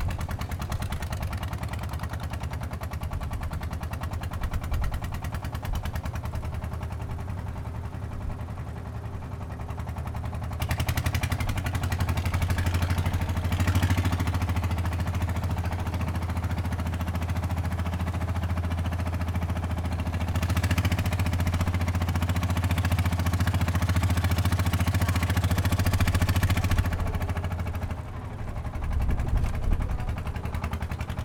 Small trucks, The sound of the wind, On the streets of a small village, Oysters mining truck
Zoom H6 MS
Changhua County, Taiwan, March 2014